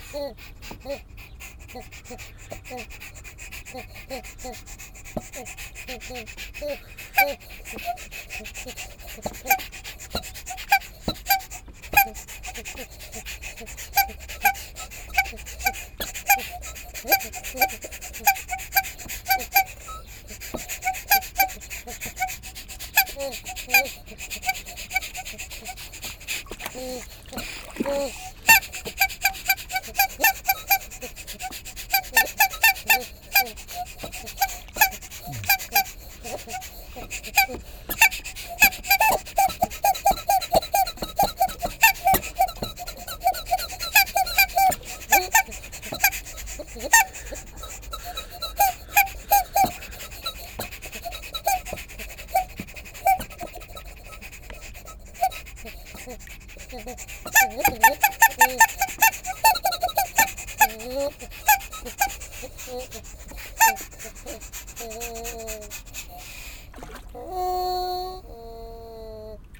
wasserorchester, quietsch pumpen - wasserorchester, quietsch pumpen 02
H2Orchester des Mobilen Musik Museums - Instrument Quietsch Pumpen - temporärer Standort - VW Autostadt
weitere Informationen unter
vw autostadt